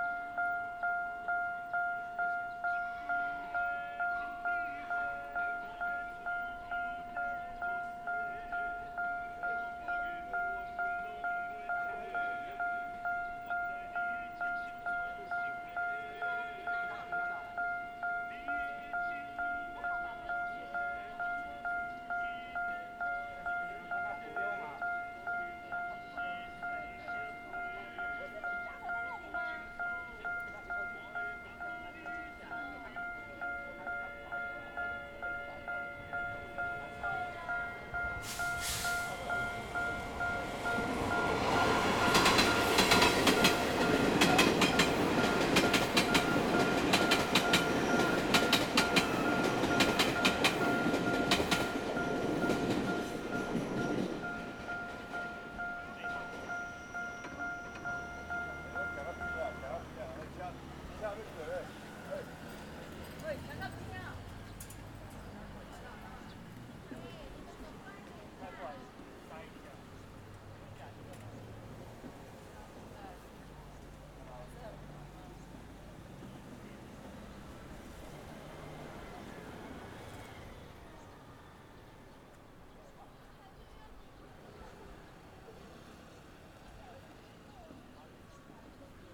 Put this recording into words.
Traffic Sound, Trains traveling through, In the railway level crossing, Beside railroad tracks, Zoom H6 MS+ Rode NT4